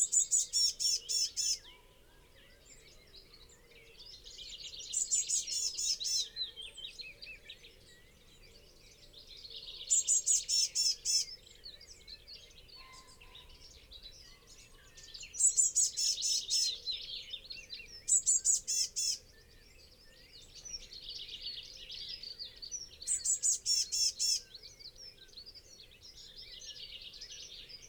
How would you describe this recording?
dawn chorus in the pit ... sort of ... lavalier mics clipped to twigs ... bird call ... song ... from ... buzzard ... tawny owl ... chaffinch ... wren ... dunnock ... willow warbler ... pheasant ... red-legged partridge ... wood pigeon ... blackcap ... blue tit ... great tit ... yellowhammer ... linnet ... greylag goose ... crow ... fieldfare ... distant roe deer can be heard 13:30 + ...